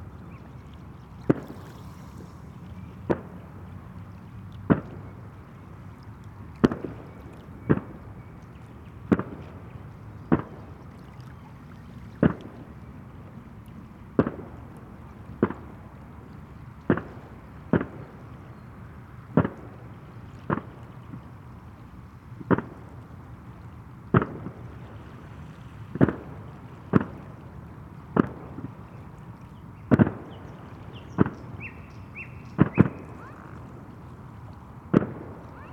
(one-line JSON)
{"title": "Habneeme Beach, fireworks and swans", "date": "2010-05-17 22:22:00", "description": "evening sounds at Habneeme beach near Tallinn", "latitude": "59.51", "longitude": "24.81", "altitude": "7", "timezone": "Europe/Tallinn"}